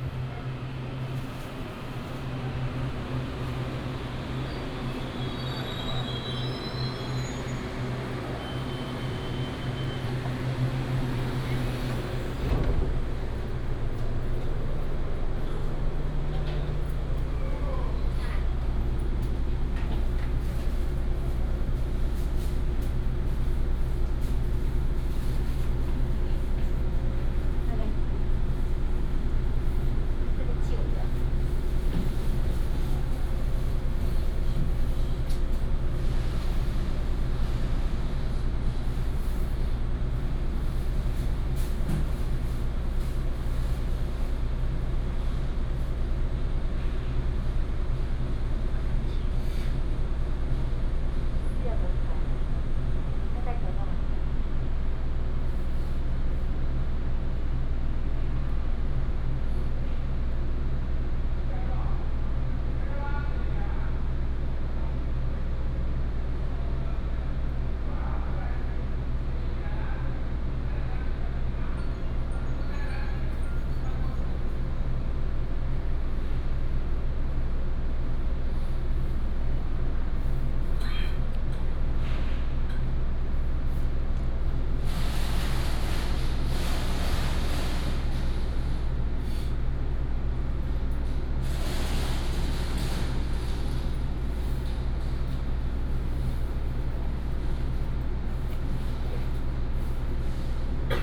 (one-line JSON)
{"title": "Zhunan Station, 苗栗縣竹南鎮 - At the station platform", "date": "2017-01-18 08:57:00", "description": "At the station platform, The train passes by", "latitude": "24.69", "longitude": "120.88", "altitude": "8", "timezone": "Asia/Taipei"}